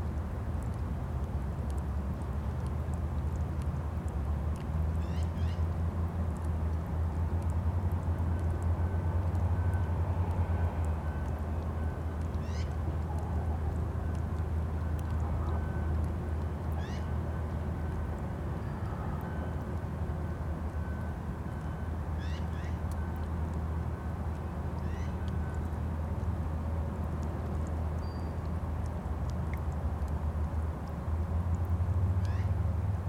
{"title": "melting ice Elbow River, Calgary", "date": "2010-04-21 21:11:00", "description": "drips from melting ice on the Elbow river in Calgary", "latitude": "51.05", "longitude": "-114.09", "altitude": "1044", "timezone": "Europe/Tallinn"}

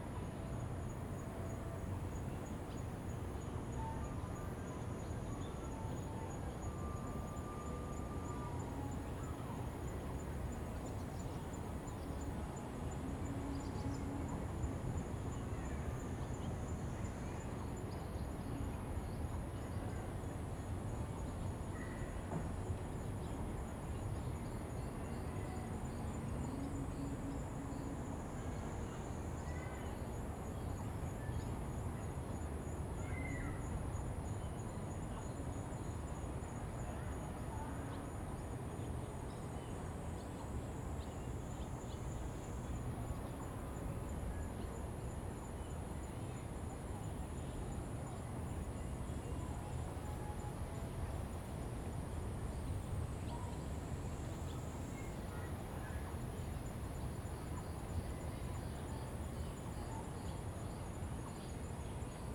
{"title": "和美山, 新店區, New Taipei City - In the woods", "date": "2015-07-28 15:46:00", "description": "In the woods, birds sound, Lakeshore came across the music and vocals\nZoom H2n MS+ XY", "latitude": "24.95", "longitude": "121.53", "altitude": "80", "timezone": "Asia/Taipei"}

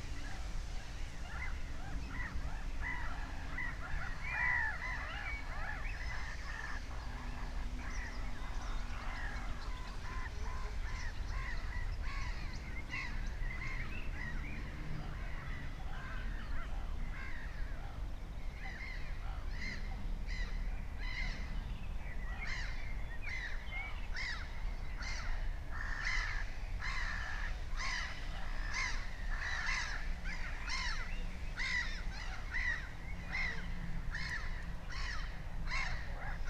{"date": "2022-06-04 19:00:00", "description": "19:00 Berlin, Buch, Moorlinse - pond, wetland ambience", "latitude": "52.63", "longitude": "13.49", "altitude": "51", "timezone": "Europe/Berlin"}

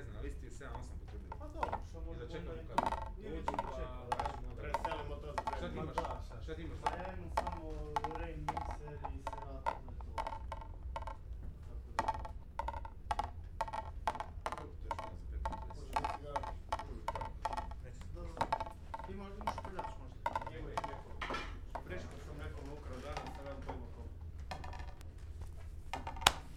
{"title": "Rijeka Spirit DJ box advanced", "latitude": "45.33", "longitude": "14.43", "altitude": "13", "timezone": "Europe/Berlin"}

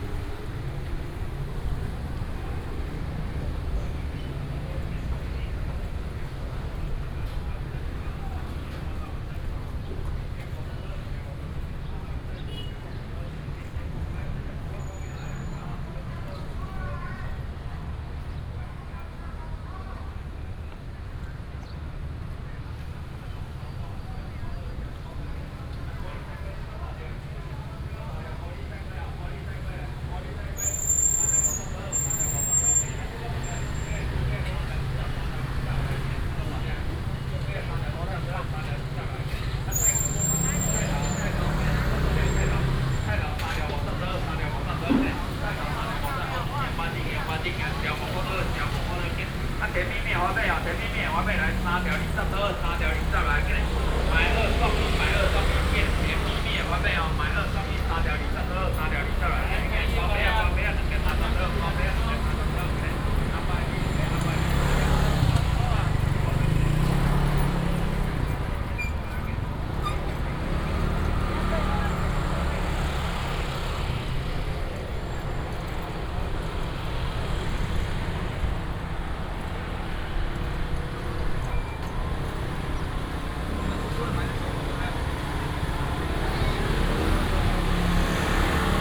{"title": "日新市場, Dali Dist., Taichung City - vendors peddling", "date": "2017-09-19 10:30:00", "description": "traditional market, traffic sound, vendors peddling, Binaural recordings, Sony PCM D100+ Soundman OKM II", "latitude": "24.11", "longitude": "120.69", "altitude": "61", "timezone": "Asia/Taipei"}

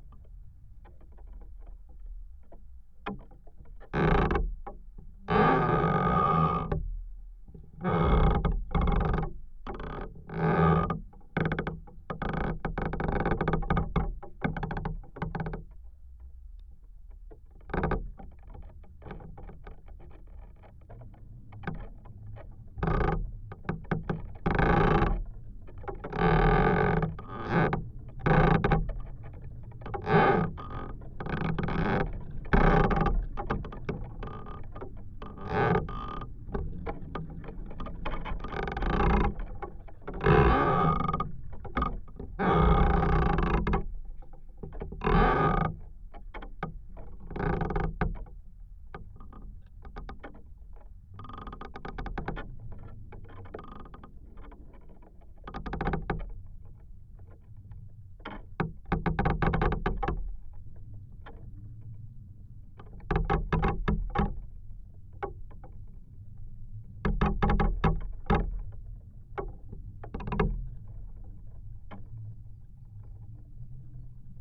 {"title": "Sudeikių sen., Lithuania, singing tree", "date": "2016-04-02 14:50:00", "description": "contact microphones placed on a branch of \"singing\" tree in the wind", "latitude": "55.52", "longitude": "25.61", "altitude": "121", "timezone": "Europe/Vilnius"}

